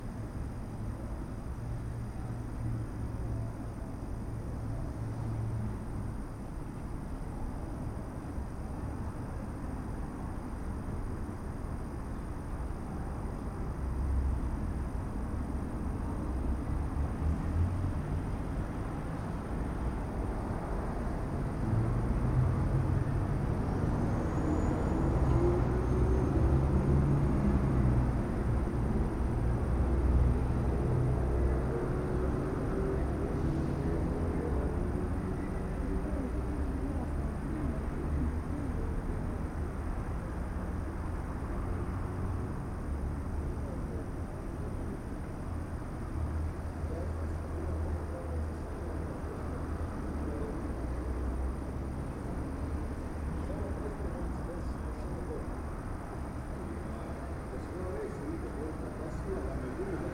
Ulica heroja Bračiča, Maribor, Slovenia - corners for one minute
one minute for this corner: Ulica heroja Bračiča 14
20 August 2012, ~21:00